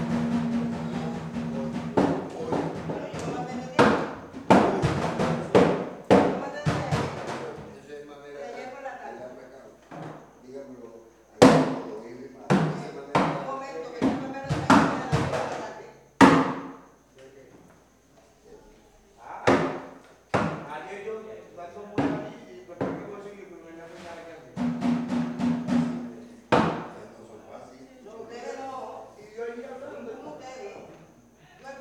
{"title": "Santiago de Cuba, preparation Tumba Francesa", "date": "2003-12-06 20:37:00", "description": "preparation of Tumba Francesa, tuning the drums with a block of wood", "latitude": "20.03", "longitude": "-75.83", "altitude": "27", "timezone": "America/Havana"}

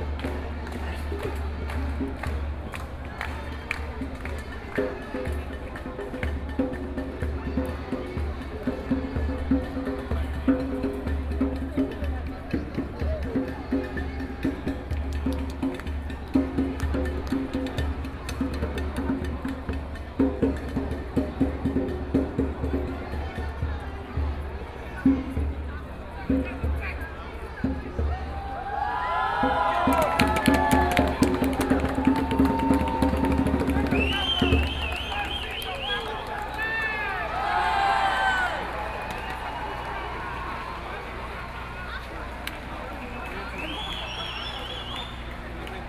{"title": "Ministry of the Interior, Taipei City - Nonviolent occupation", "date": "2013-08-18 22:48:00", "description": "To protest the government's dereliction of duty and destruction of human rights, Zoom H4n+ Soundman OKM II", "latitude": "25.04", "longitude": "121.52", "altitude": "11", "timezone": "Asia/Taipei"}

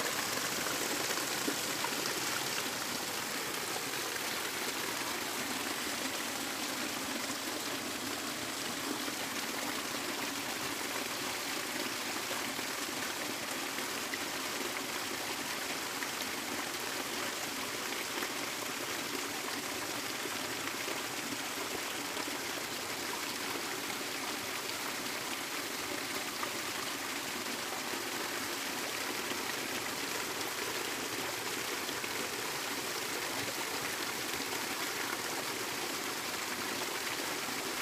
{"title": "Cascade trail creek, lake Chabot reservoir - Cascade trail creek, lake Chabot reservoir", "date": "2010-02-22 05:09:00", "description": "waterfall and creek on Cascade trail - leading trail to the lake Chabot", "latitude": "37.76", "longitude": "-122.12", "altitude": "112", "timezone": "US/Pacific"}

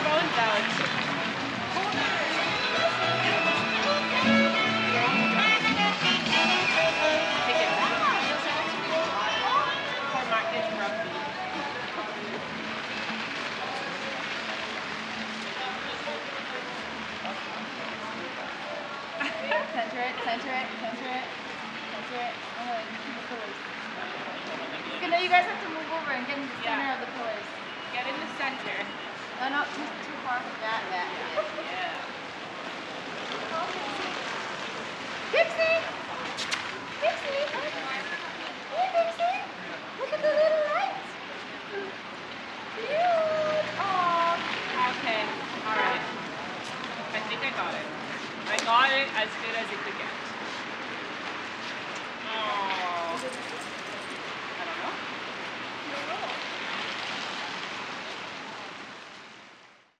{"title": "Rue Saint Paul Est Local, Montréal, QC, Canada - Marché Bonsecours", "date": "2021-01-02 18:19:00", "description": "Recording of pedestrians, a dog, and music being played by a passing vehicle.", "latitude": "45.51", "longitude": "-73.55", "altitude": "24", "timezone": "America/Toronto"}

